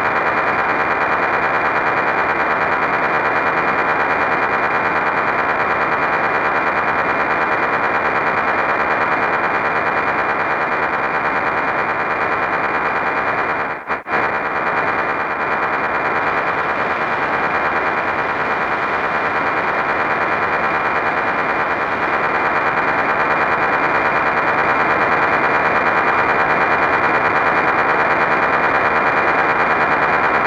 La Galaube-Tarn 81-France / Conspiracy And Crash/Lab01/Install+capt. sonores/Isio4 <++
CONSPIRACY AND CRASH0809022008
>CAPTATIONS SONORES DES FREQ.RADIO AM/ FLUX ALEATOIRE
>ANTENNE RELAI BASE MILITAIRE/
SOUS MARIN/FRANCE
INSTALLATION>